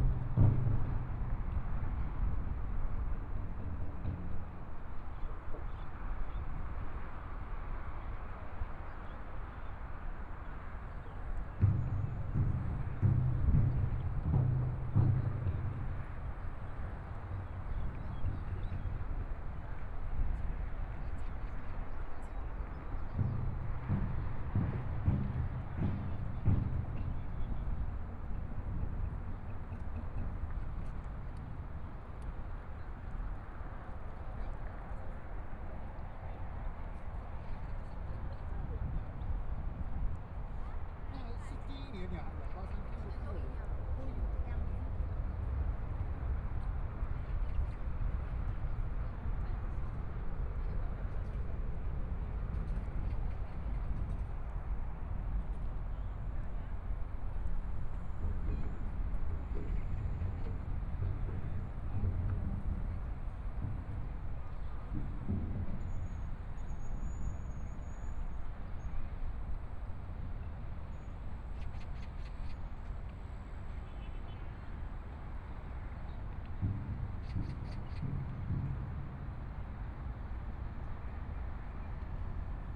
大佳河濱公園, Taipei City - walking in the Park
walking in the Park, Traffic Sound, Sound from highway, Holiday, Sunny mild weather, Birds singing, Binaural recordings, Zoom H4n+ Soundman OKM II